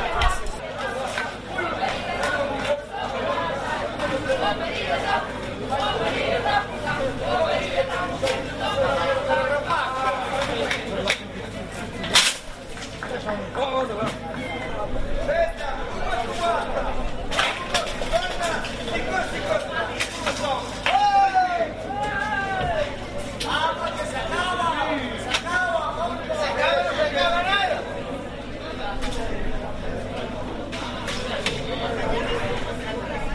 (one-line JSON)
{"title": "Gestosa, Vinhais (PT). after the concert, drunk people singing (A.Mainenti)", "latitude": "41.88", "longitude": "-7.15", "altitude": "713", "timezone": "Europe/Berlin"}